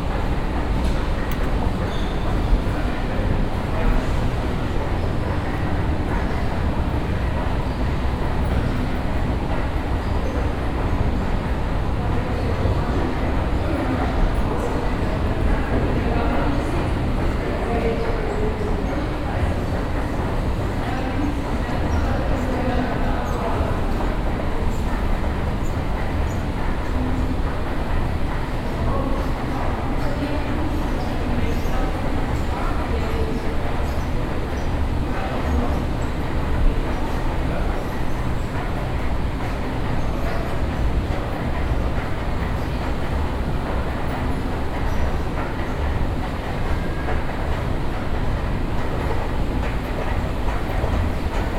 A pair of moving staircases leading to the basement entry of a store.
Projekt - Klangpromenade Essen - topographic field recordings and social ambiences
8 June 2011, 23:44